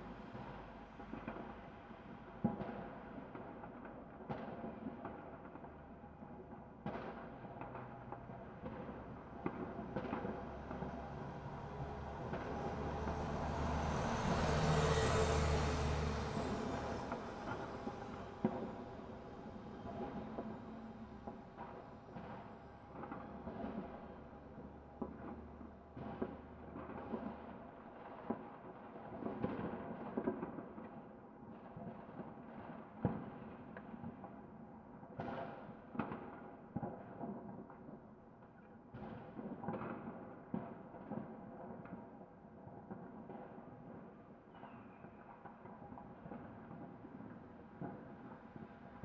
Rijeka, Croatia, Fireworks - 2014 Fireworks
31 December 2013, 23:50